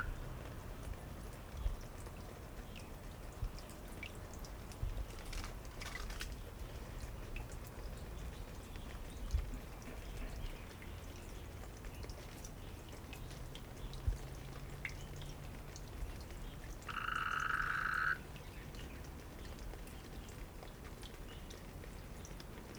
Xiaocukeng, Pinglin Dist., New Taipei City - a small mountain road
Next to a small mountain road, Insects sounds, traffic sound, The sound of water droplets
Sony PCM D50